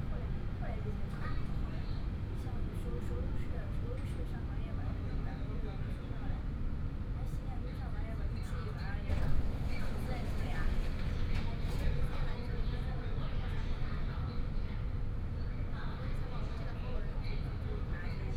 Hongkou District, Shanghai - Line 10 (Shanghai Metro)
from Siping Road Station to Tiantong Road Station, Binaural recording, Zoom H6+ Soundman OKM II